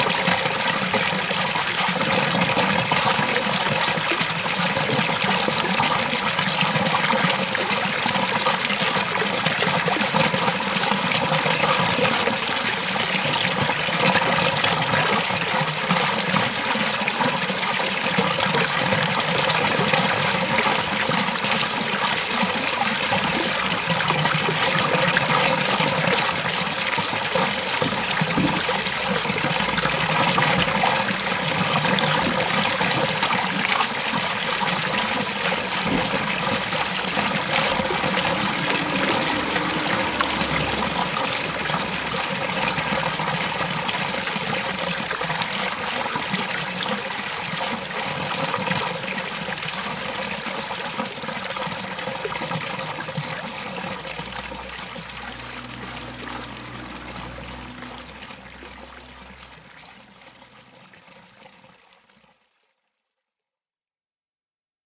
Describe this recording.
At the main street listening to the sound of a more modern 70's style fountain. Parallel some cars passing by. soundmap d - social ambiences, water sounds and topographic feld recordings